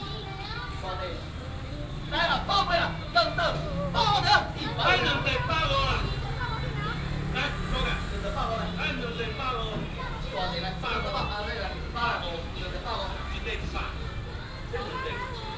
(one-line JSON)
{"title": "Minsheng Rd., Houli Dist., Taichung City - Selling fish sound", "date": "2017-01-22 10:29:00", "description": "traditional markets, vendors selling sound, Selling fish sound", "latitude": "24.31", "longitude": "120.71", "altitude": "223", "timezone": "GMT+1"}